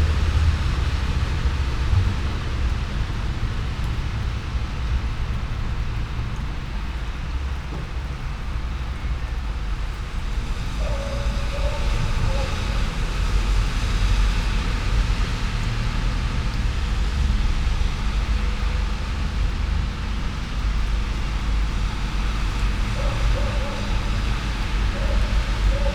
all the mornings of the ... - jun 11 2013 tuesday 07:07
11 June 2013, 07:07